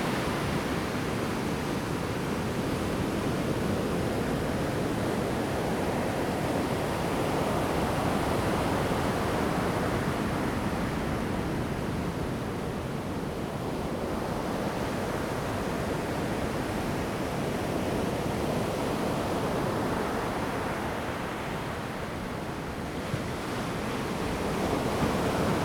bay, Sound of the waves, wind
Zoom H2n MS+XY
Pingtung County, Taiwan